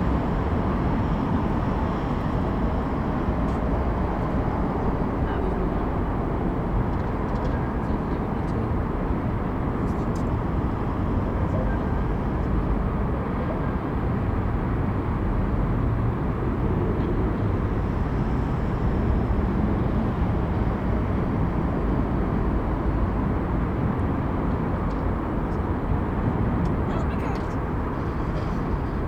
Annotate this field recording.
V chrámu sv. Bartoloměje, na věži a na náměstí.